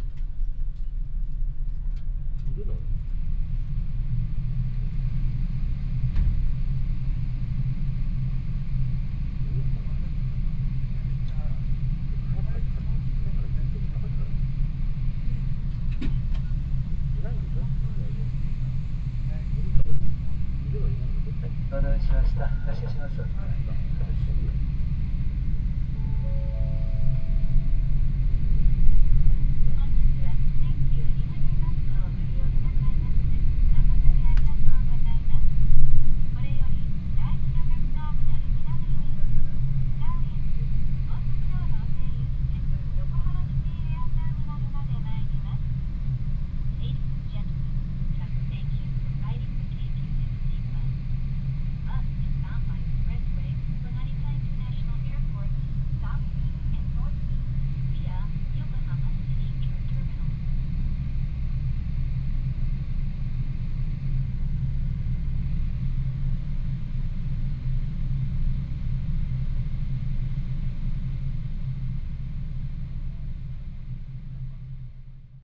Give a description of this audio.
Inside the airport transit bus. First bus sound while driving, then a stop ans some announcement outside and then another announcement while driving again. international city scapes - topographic field recordings and social ambiences